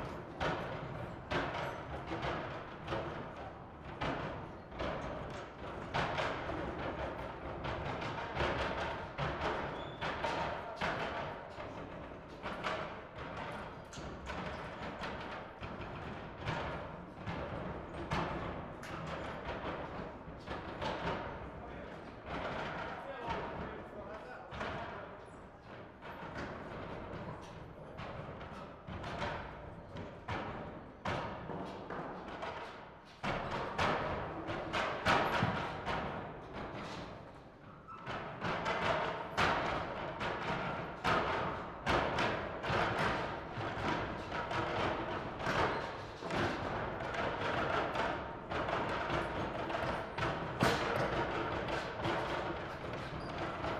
Rijeka, Zamet, SportVenue, temporary sitting